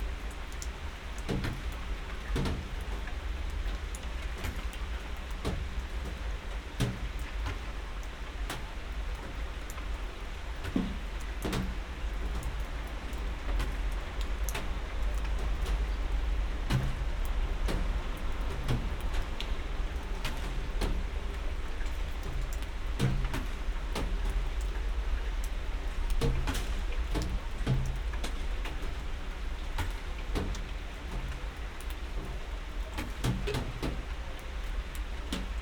berlin, sanderstraße: vor restaurant - the city, the country & me: in front of a restaurant
under porch of the restaurant
the city, the country & me: july 17, 2012
99 facets of rain